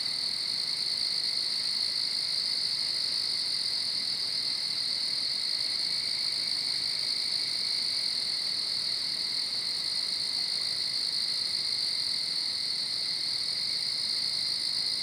Lost Maples State Park, TX, USA - Night Insects, Frogs & Birds
Recorded with a pair of DPA4060's into a Marantz PMD661
26 October 2015, 01:41